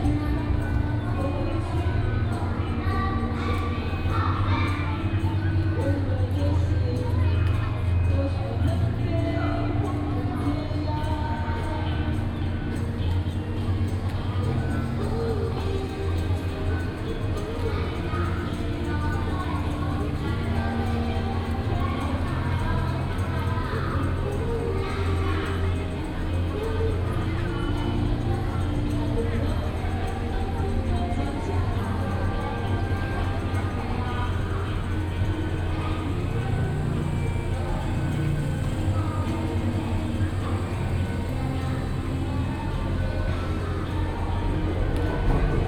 Standing outside the station, Wall next to the station, Came the voice from the station hall, Above the sound of the train arrival and departure, There is the sound of distant Markets Activities, Binaural recordings, Sony PCM D50 + Soundman OKM II
Taipei City, Taiwan, November 2013